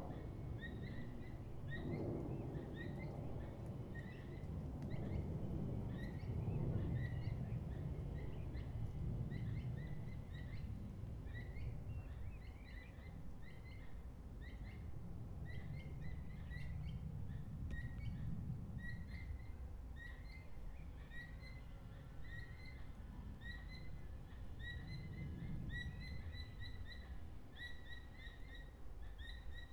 In a forest on the way to the Eglise Notre Dame de la Garoupe. At the start you can hear a plane overhead and throughout the track two birds calling to each other. One of the birds stops calling but the other continues consistently. You can also hear walkers, other birds, and more planes.
Recorded on ZOOM H1.